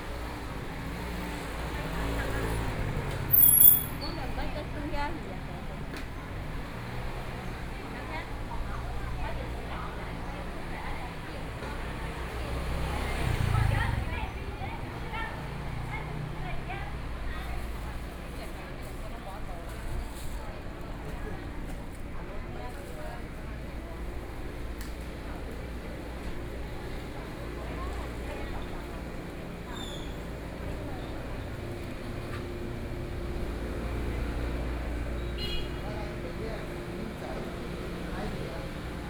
Sanfeng Central St., Kaohsiung City - Shopping Street
Traditional goods and food shopping street, Traffic Sound